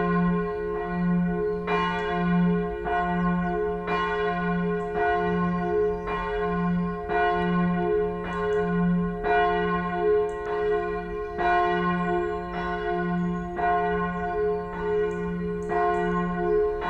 evening church bells of St.Lubentius basilica
(Sony PCM D50, DPA4060)